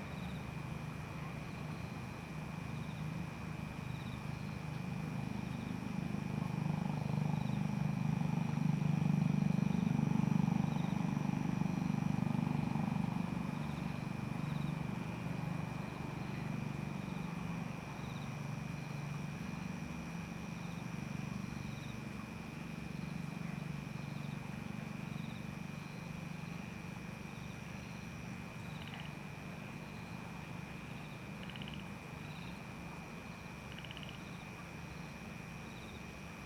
Lane TaoMi, Puli Township - Frogs chirping
Frogs chirping
Zoom H2n MS+XY
Puli Township, 桃米巷52-12號, 26 March 2016, 20:48